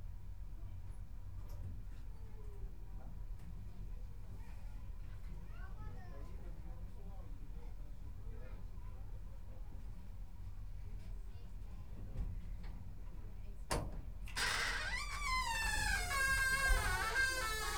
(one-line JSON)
{"title": "Anykščiai, Lithuania, in a train", "date": "2014-08-31 14:33:00", "description": "tourist train stops at the crossroads", "latitude": "55.53", "longitude": "25.12", "altitude": "79", "timezone": "Europe/Vilnius"}